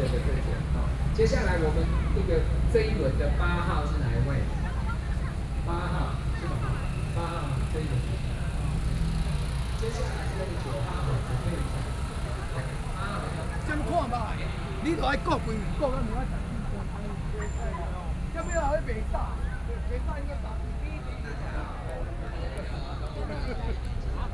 Taipei City, Taiwan - Protest
Protest, People and students occupied the Legislature
Binaural recordings